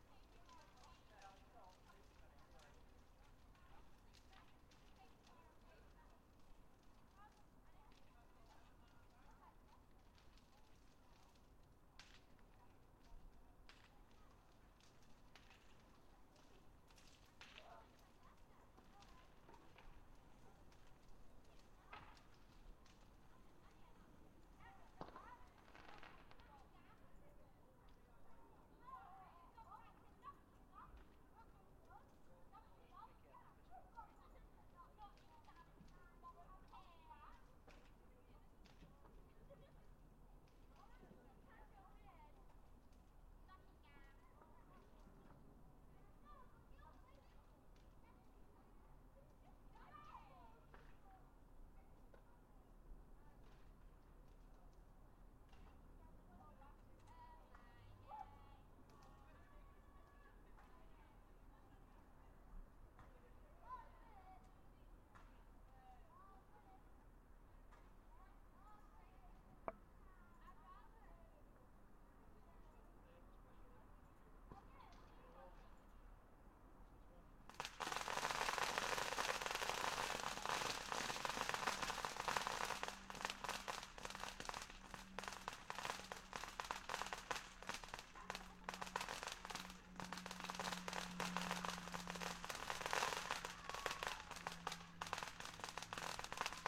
Guy Fawkes Bonfire Party
Sounds of bnfire, people and fireworks for November 5th Guy Fawkes Bonfire celebrations
Derbyshire, UK